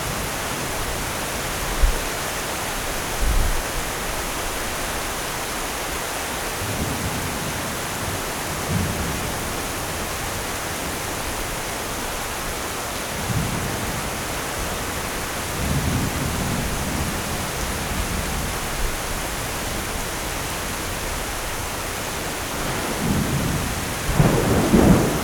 One Hour Thunder & rain during the night in the middle of the bananas trees, in Veracruz.
Recorded by a setup ORTF with 2 Schoeps CCM4
On a Sound Devices Mixpre6 recorder
During a residency at Casa Proal (San Rafael, Veracruz)